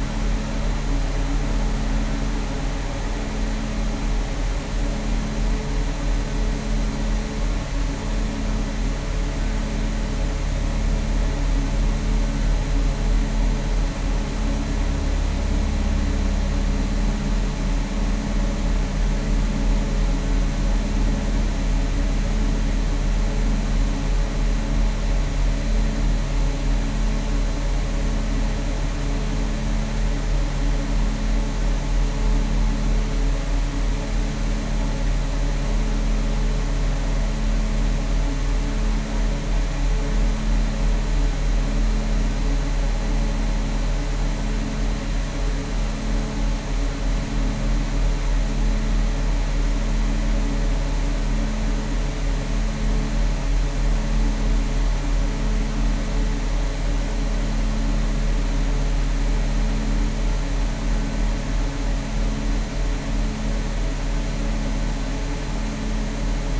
Kerpen, Buir, Deutschland - solar power station
solar / photovoltaic power station hum, solar panels stretch about 1km alongside new and not yet finished A4 motorway and heavy duty train line of RWE power. both facilities are neccessary due to the extension of the Hambach opencast lignite / brown coal mine.
(Sony PCM D50, DPA4060)